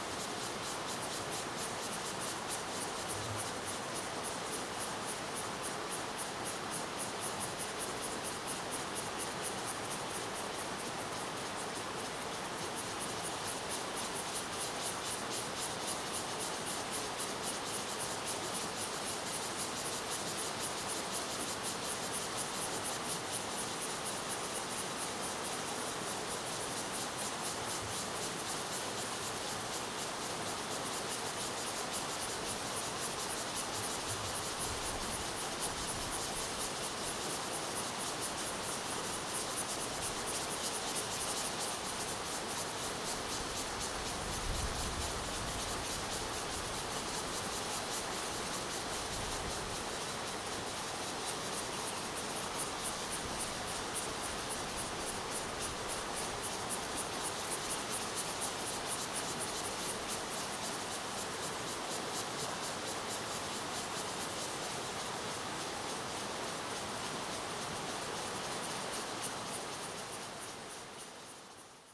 秀姑橋, Rueisuei Township - Cicadas and streams
Cicadas sound, The sound of streams
Zoom H2n MS+XY
Rueisuei Township, Hualien County, Taiwan, 9 October 2014